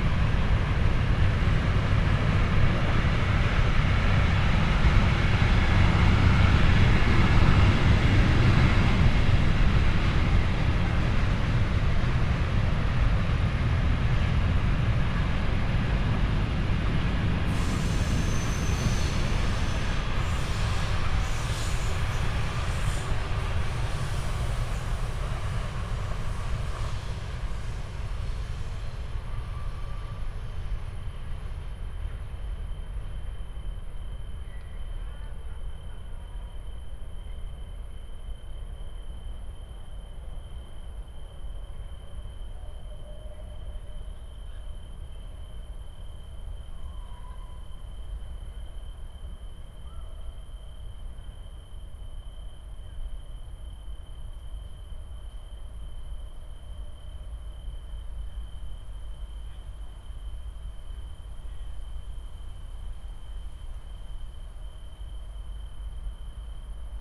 Stadtgarten, Köln - trains and tree crickets
radio aporee ::: field radio - an ongoing experiment and exploration of affective geographies and new practices in sound art and radio.
(Tascam iXJ2 / iphone, Primo EM172)